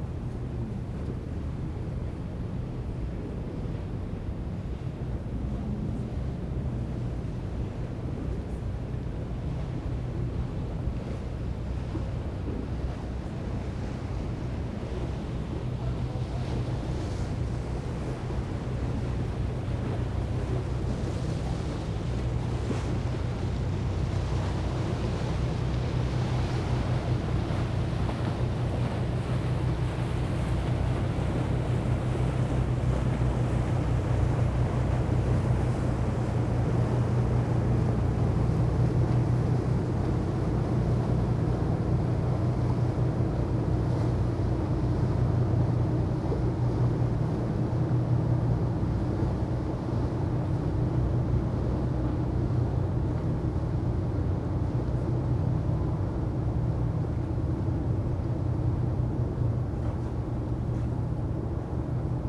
tourist boats generating waves, sound of trains and sirens
the city, the country & me: august 5, 2011
Berlin, Germany, 5 August 2011